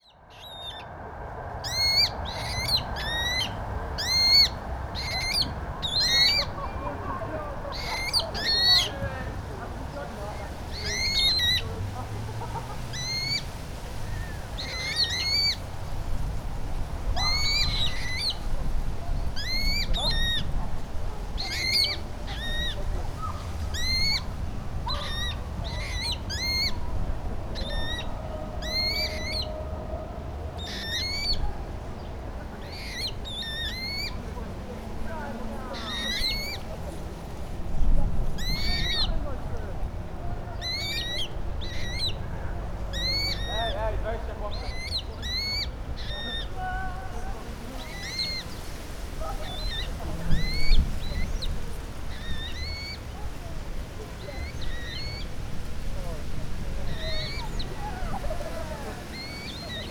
Strzeszyn district, Strzeszynskie lake - Eurasian coot chicks
desperate calls of three Eurasian coot chicks. The parents took off towards towards the middle of the lake, leaving the chicks in the rushes. They were undecided whether they should follow the parents which were already about 250m away. I captured the exact moment when the chicks decided to leave the safety of the rushes and swim alone in the open lake after the parents. Also shouts of teenagers of the roof, boys throwing girls into the lake and competing in swimming. (sony d50)